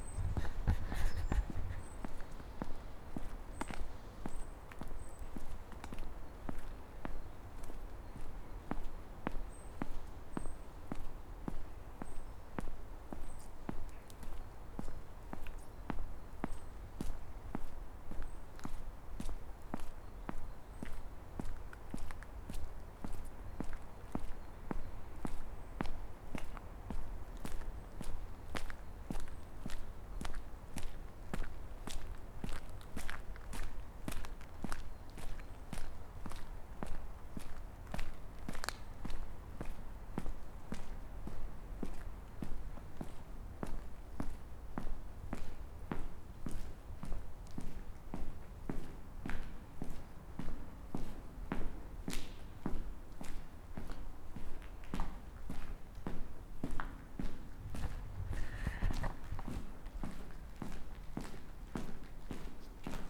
Sentier de la Station, Charleroi, België - Roux Railway Tunnel
Walking through the creepy railway tunnel between Roux and the Canal